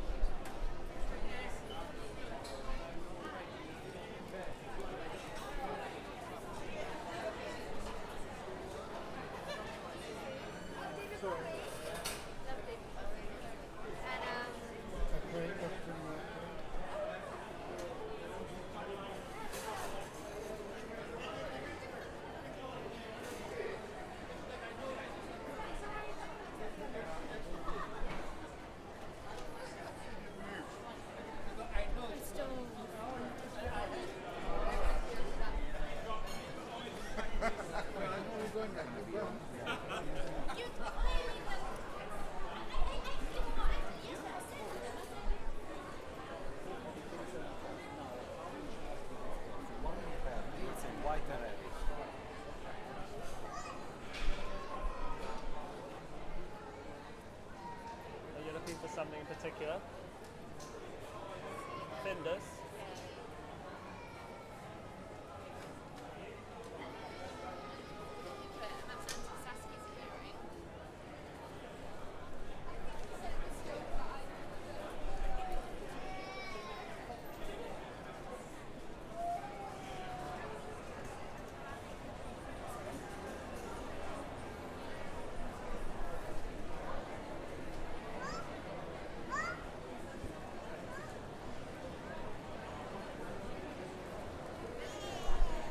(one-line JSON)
{"title": "Greenwich Market, London, UK - A stroll through the market...", "date": "2021-08-01 13:00:00", "description": "A walk through the indoor market in Greenwich. A comforting collage of ambient crowd burbling, snippets of conversation, and various music sources. Finally, we emerge back onto the street to find a trio of street musicians limbering up after a cigarette break. As you'll hear, my partner, Ulrika, didn't find the hand made soap she was looking for. Apparently, the stall-holder doesn't work Sundays.", "latitude": "51.48", "longitude": "-0.01", "altitude": "7", "timezone": "Europe/London"}